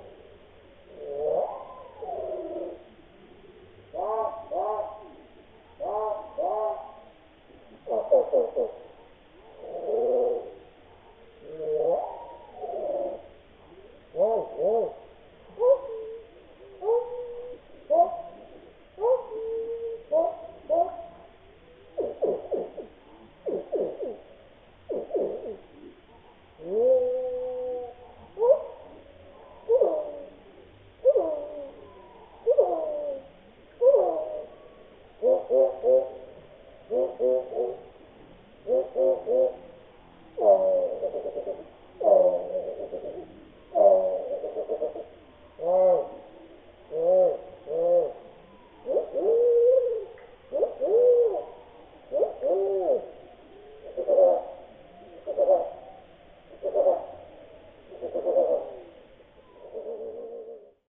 Eurasian Reed Warbler in Alam-Pedja nature reserve
First song in natural speed, then slowed down 6 times.